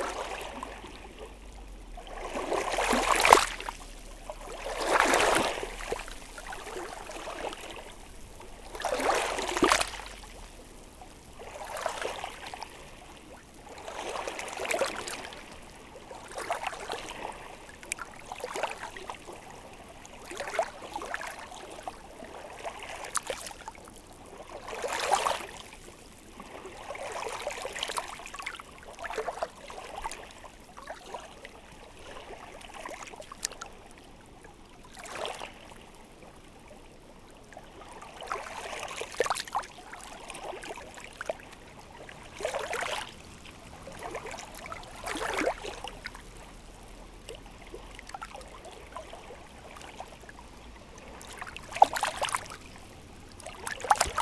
Kings, Subd. B, NS, Canada - High tide rippling stones at the base of the red cliff
A cove reached by wooden steps down the red earth cliffs of the Blomidon National Park. The sea here is part of the Minas Basin, which has the highest tidal rise and fall in the world. Even during this recording it has risen 4cm and swamps the microphones, which fortunately don't seem to mind so much.